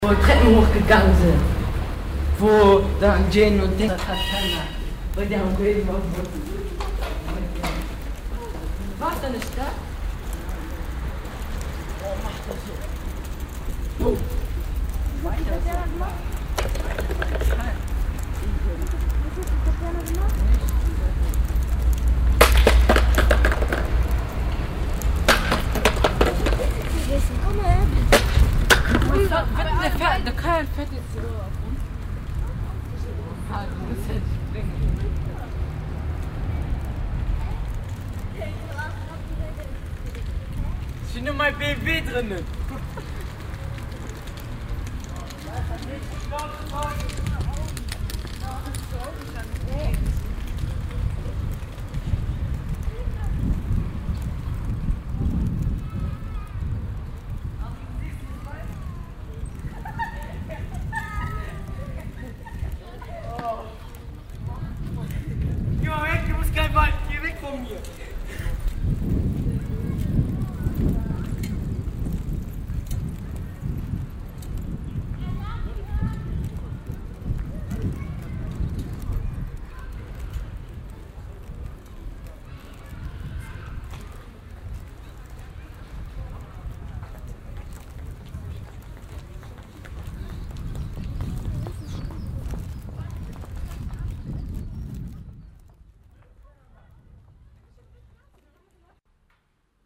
monheim, zentrum, jugendliche
jugendliche am frühen nachmitag im stadtzentrum
project: : resonanzen - neanderland - social ambiences/ listen to the people - in & outdoor nearfield recordings